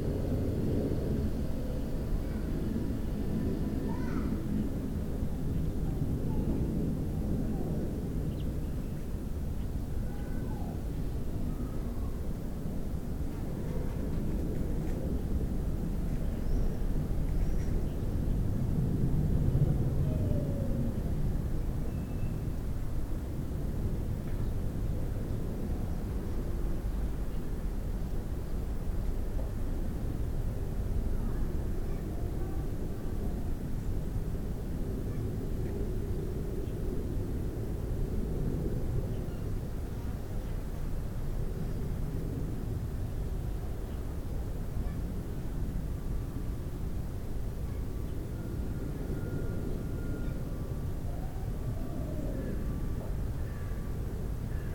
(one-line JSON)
{"title": "In the branches of a distorted hazel tree, Reading, UK - A sparrow singing in the dead distorted hazel tree", "date": "2013-07-12 11:00:00", "description": "This is the sound of a sparrow singing high up in the branches of what is now a dead tree. Sparrows are nesting in the roof of the house; they fly about the in a little squadron, belting out their rather tuneless peeps. Decided to go up a ladder and strap my recorder to a branch near to where they like to perch, in order to record their song more closely and hopefully hear them in a little more detail. Recorded with EDIROL R-09 cable-tied up in the tree.", "latitude": "51.44", "longitude": "-0.97", "altitude": "55", "timezone": "Europe/London"}